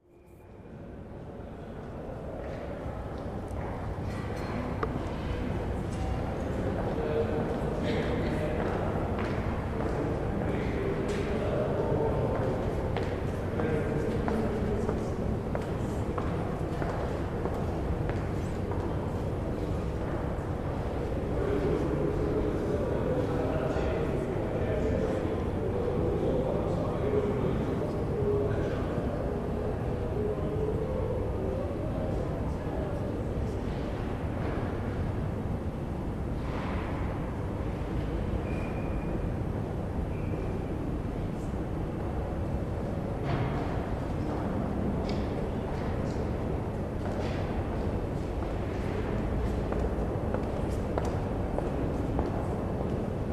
Ceska sporitelna bank, interior
inside of the neorenessance building of the Bank, former museum of Klement Gottwald.